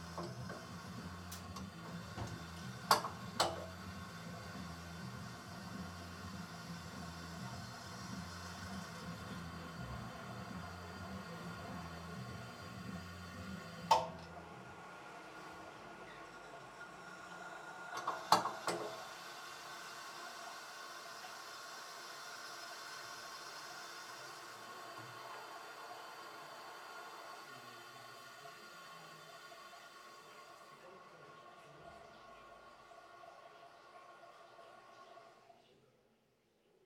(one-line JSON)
{"title": "Rte des Bruyères, Longuenesse, France - Longuenesse - Pas-de-Calais - Centre de Détention", "date": "2022-05-12 12:05:00", "description": "Longuenesse - Pas-de-Calais\nCentre de Détention\nintérieur cellule", "latitude": "50.73", "longitude": "2.25", "altitude": "71", "timezone": "Europe/Paris"}